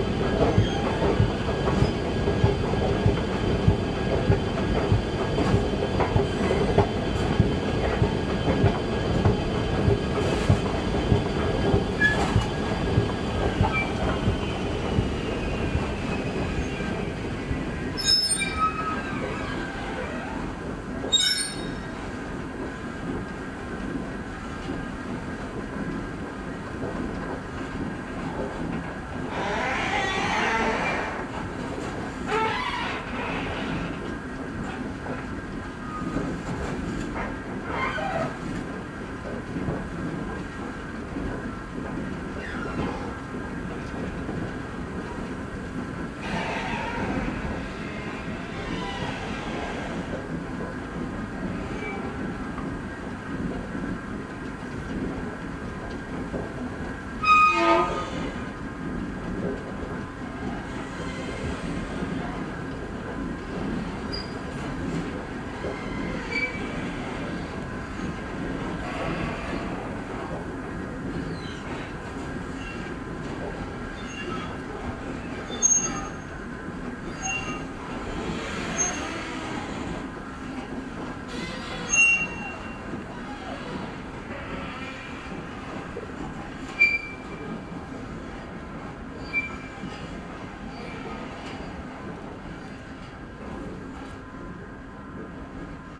Pr. Beatrixlaan, Den Haag, Netherlands - Escalator Squeaks at Night
An escalator from street level to the elevated tramway squeaks and groans late at night. It continually moves regardless if anyone is present. Its beautiful song easily overlooked by commuters. I captured this recording late at night to avoid the interference of passing cars, attempting to capture purely the escalator's song.
Zuid-Holland, Nederland, 8 March, 02:00